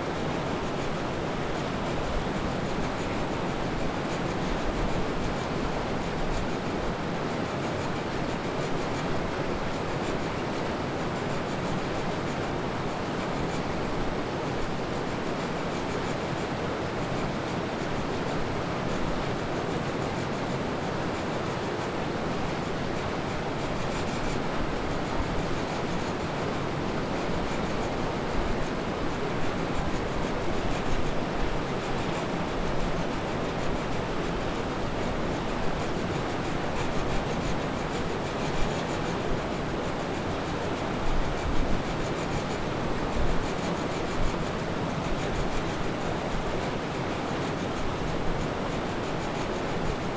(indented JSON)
{
  "title": "Lithuania, Uzpaliai, turbine at hydro power plant - turbine at hydro power plant",
  "date": "2012-03-29 16:15:00",
  "description": "turbine and falling water at the hydro power plant dam",
  "latitude": "55.65",
  "longitude": "25.58",
  "altitude": "89",
  "timezone": "Europe/Vilnius"
}